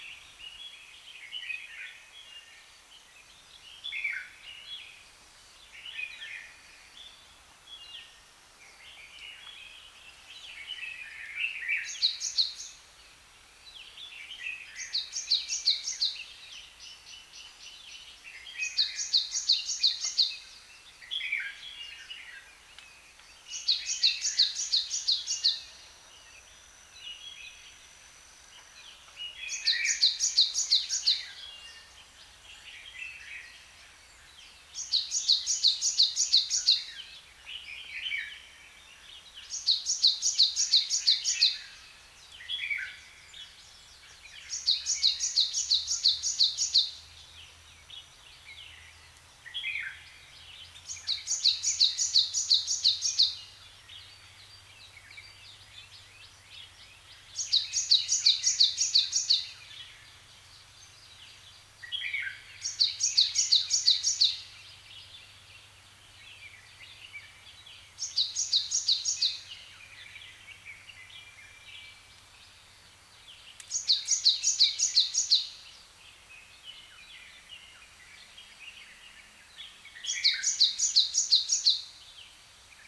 Lau Shui Heung Reservoir is located in Pat Sin Leng Country Park, it was build after WWII as the water resource for the agriculture activity around it. Apart from the sound of the running water, birds' song, crows' call and frogs' chorus can be heard all around the reservoir.
流水響水塘位於香港新界北區東部的八仙嶺郊野公園之內，水塘建於二戰後，為附近農地提供水源。除了流水，鳥嗚、鴉叫、蛙聲等亦響徹整個水塘。
#Birds